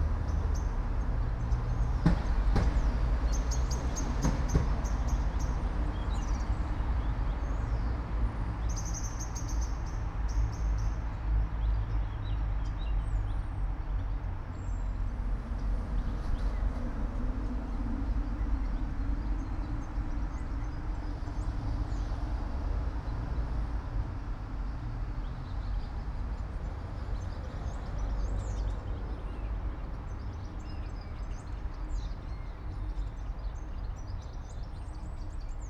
all the mornings of the ... - apr 24 2013 wed